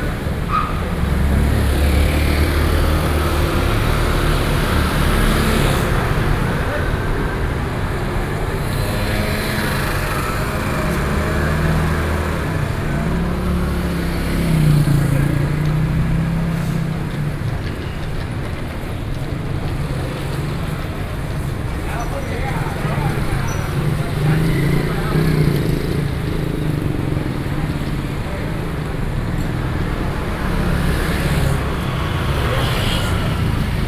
Xindian Rd., Xindian Dist. - Intersection, Traffic noise